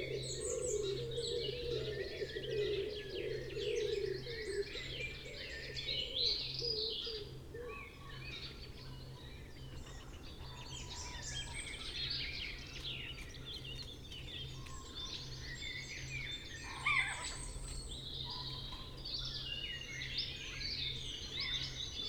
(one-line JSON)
{"title": "Green Ln, Malton, UK - the wood wakes up ... two ...", "date": "2019-04-14 05:25:00", "description": "the wood wakes up ... two ... pre-amped mics in SASS ... bird call ... song ... from ... pheasant ... wren ... blackbird ... song thrush ... robin ... great tit ... blue tit ... wood pigeon ... tree creeper ... chaffinch ... great spotted woodpecker ... chiffchaff ... buzzard ... background noise and traffic ...", "latitude": "54.12", "longitude": "-0.57", "altitude": "106", "timezone": "Europe/London"}